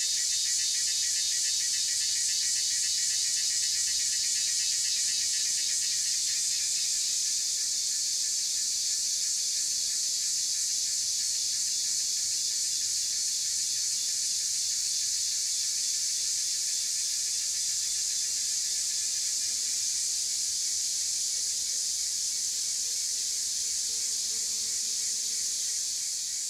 Cicada sounds, traffic sounds
Zoom H2n MS+XY
Nantou County, Taiwan